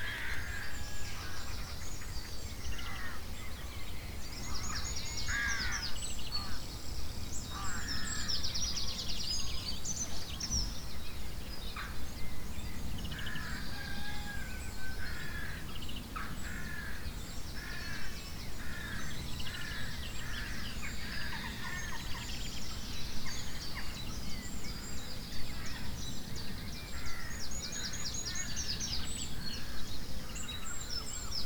Early morning. 100 yards from the copse, facing west. Lots of birds, and lambs / poultry a long way off at Graston farm. My back was to the tent and you can hear my boy shifting on his air bed every now and then.
Recorded on a Tascam DR-40 with the built in mics set to wide.